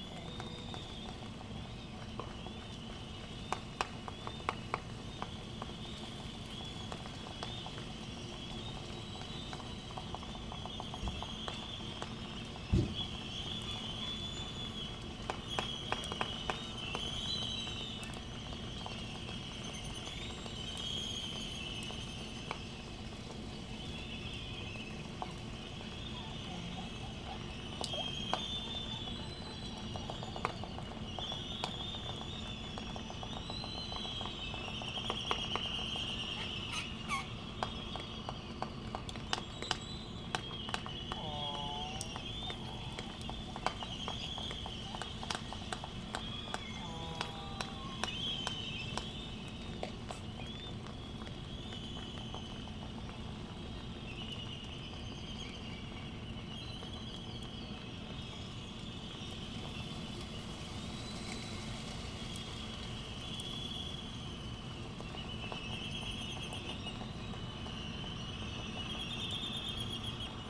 United States Minor Outlying Islands - Laysan albatross dancing ...
Sand Island ... Midway Atoll ... laysan albatross clappering bills more than anything else ... bird calls from black noddy ... Sony ECM 959 one point stereo mic to Sony Minidisk ... background noise ...
25 December